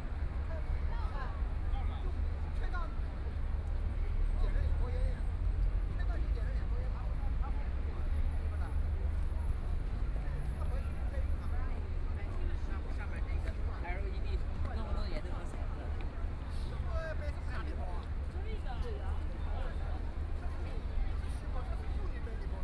the Bund, Shanghai - Tourist area
the Bund's environmental sounds, Traffic Sound, Bell tower, Very many people and tourists, Binaural recording, Zoom H6+ Soundman OKM II
Shanghai, China